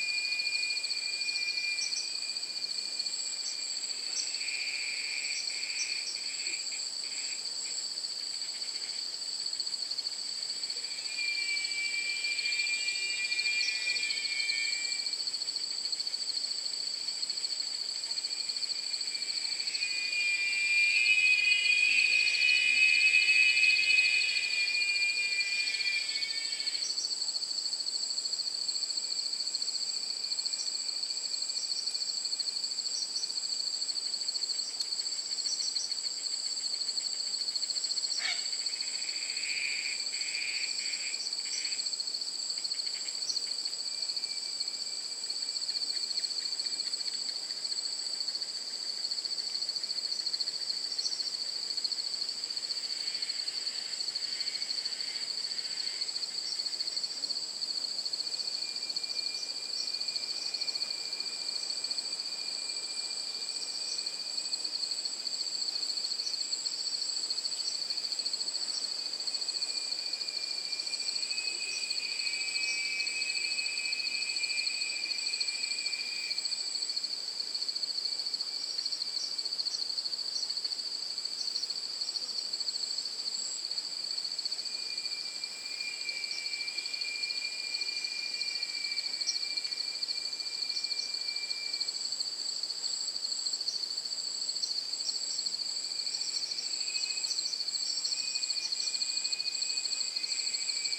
Lake Bacalar, Quintana Roo, Mexico - Yucatan birds and bugs by the Lake
Bugs and birds getting excited for the evening's activities by the shore of Lake Bacalar, the "Lake of Seven Shades of Blue" in the Yucatan. Listen for this absurdly loud cicada type bug which sounds like an intermittent electronic alarm. One of the bugs went off right next to the microphone causing an ear detonation, so I lowered that moment by 15 dB...